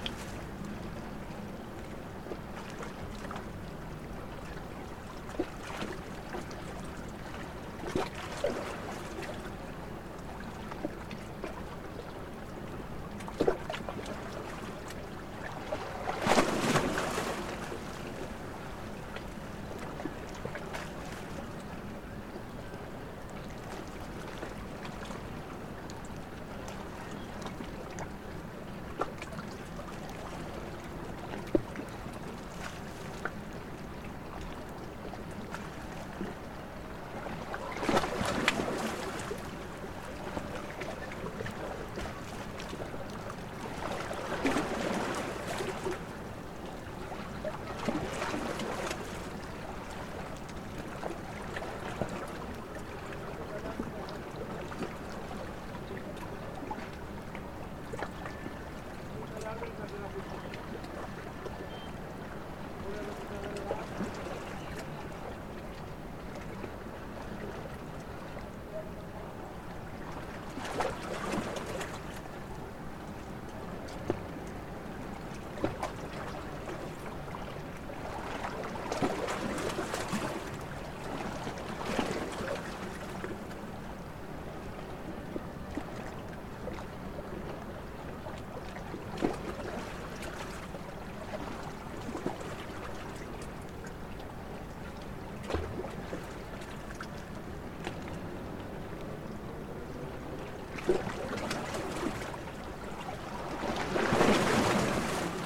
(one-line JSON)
{"title": "Sc Puerto Gandia Autoriza, Valencia, España - Noche junto al puerto de Gandía zona de Pescadores", "date": "2020-08-16 22:35:00", "description": "Noche en el puerto de Gandía, en una zona donde se suelen poner pescadores, esta noche había unos señores pescando. Donde se ponen los pescadores es una zona rocosa y aunque al ser puerto el movimiento del agua es tranquilo, los pequeños movimientos de agua que chocan en la zona rocosa hace sonar esos gorgoritos de agua y los pequeños choques de olas. Está cerca del paseo marítimo y al ser una zona turística, se puede escuchar un poco de fondo el sonido de la vida del paseo.", "latitude": "39.00", "longitude": "-0.15", "altitude": "1", "timezone": "Europe/Madrid"}